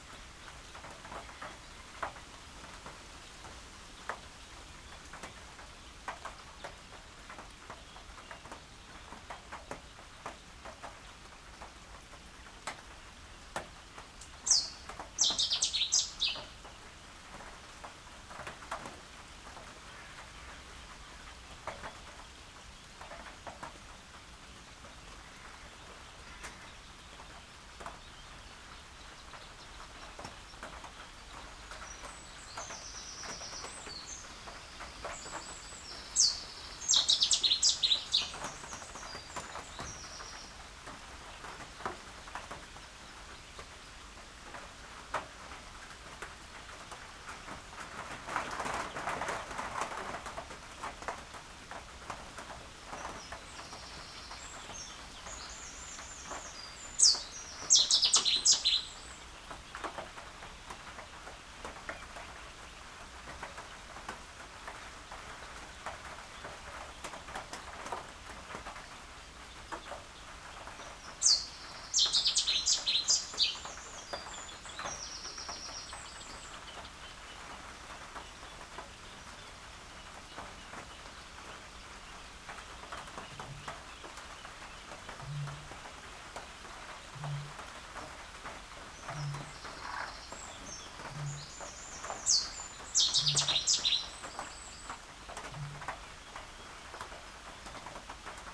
Meare, UK - Rain ... on reed beds ... bird hide ... and a cetti's warbler ...
Cetti's warbler in the rain ... rain falling on an open sided bird hide in front of a reed bed ... bird calls and song from ... Cetti's warbler ... Canada geese ... wren ... reed warbler ... little grebe ... coot ... crow ... bittern ... cuckoo ... greylag geese ... open lavalier mics clipped to a sandwich box ... lots of background noise ...
South West England, England, UK, 15 May 2017